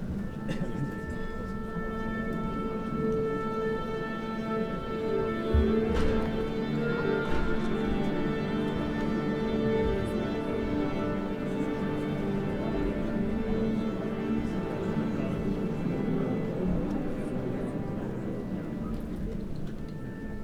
Bebelpl., Berlin, Allemagne - Attending the Opera
Die Zauberflöte at the Staatsoper, Berlin. Attendance ambiance, orchestra tuning, crowd clapping and first bars of overture.
Recorded with Roland R-07 + Roland CS-10EM (binaural in-ear microphones)
Deutschland, 27 December 2021